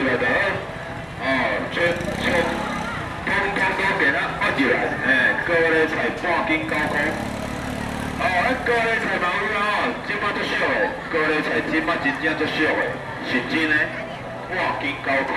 walking in the Sunset Market, Sony ECM-MS907, Sony Hi-MD MZ-RH1